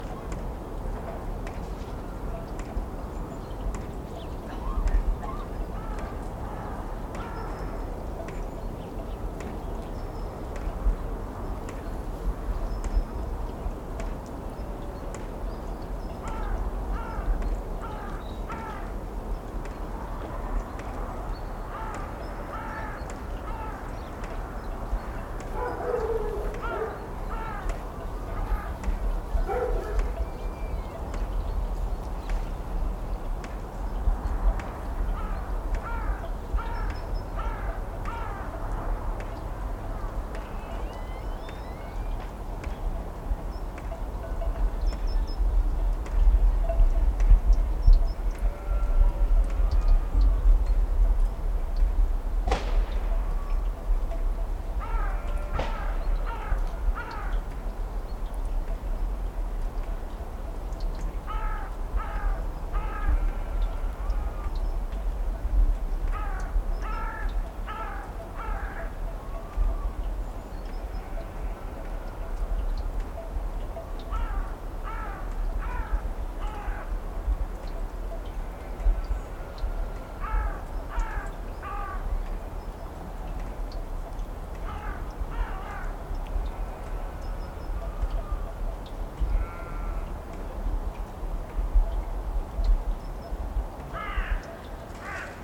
Saléchan, France - Saléchan début 2015
Mountain atmosphere, goats' bells, crows, dog barking and remote cars passing by.